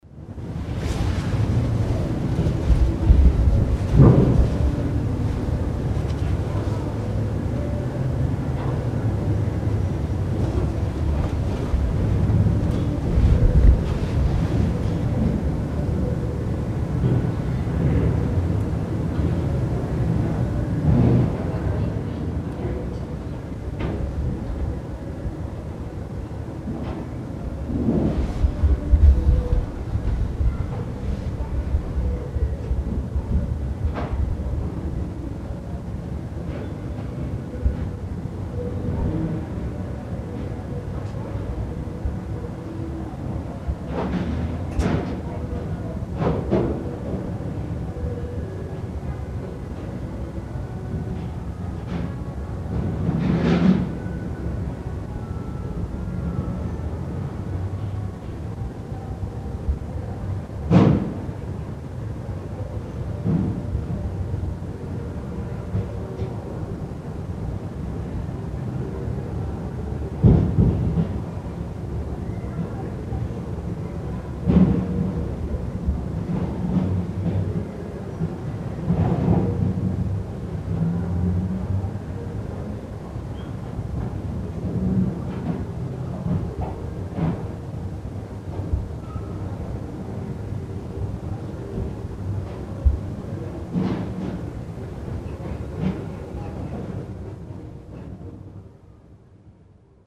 mettmann, st.lambertuskirche, glocken - mettmann, st.lambertus kirche, atmo im kirchturm
aufnahme in der kirchturmspitze - im glockenturm, mittags
soundmap nrw:
social ambiences/ listen to the people - in & outdoor nearfield recordings